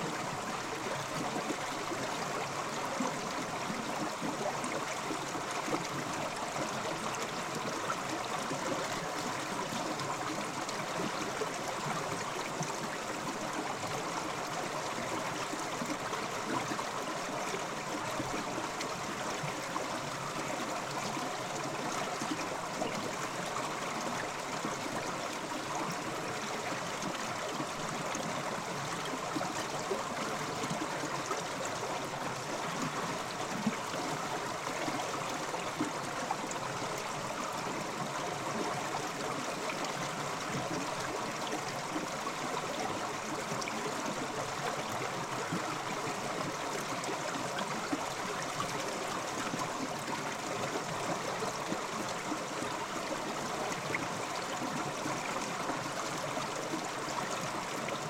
When winter is real winter! Small rivers and streamlets are entwined in ice. There is some little opening just under the passenger's bridge. Dougle recording: first part - omni mics, the second part - omni with geophone on ice.